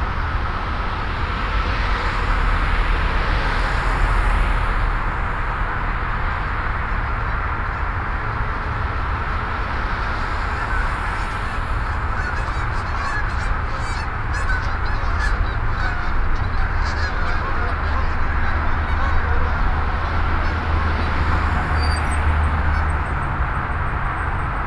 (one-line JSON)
{"title": "Fairfax, Fair Oaks Mall, Ducks and road traffic", "date": "2011-11-02 19:00:00", "description": "USA, Virginia, road traffic, cars, ducks, binaural", "latitude": "38.86", "longitude": "-77.35", "altitude": "124", "timezone": "America/New_York"}